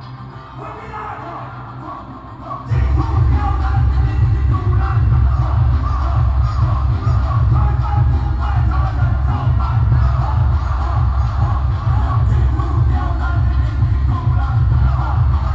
Zhongzheng Dist., Taipei City - Shouting slogans
Protest songs, Cries, Shouting slogans, Binaural recordings, Sony PCM D50 + Soundman OKM II